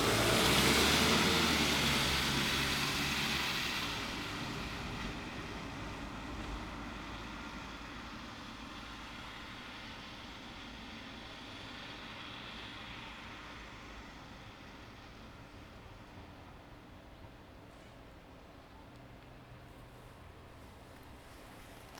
Hoetmar, Mitte, Germany - At bus stop Mitte...
arriving somewhere quiet and unknown...
Kreis Warendorf, Nordrhein-Westfalen, Deutschland, 23 October